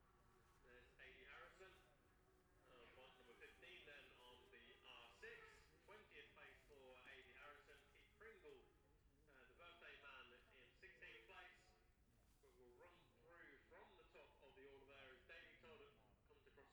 Jacksons Ln, Scarborough, UK - gold cup 2022 ... 600cc qualifying
the steve henshaw gold cup 2022 ... 600cc qualifying group 1 ...group two ... dpa 4060s on t-bar on tripod to zoom h5 ...
16 September 2022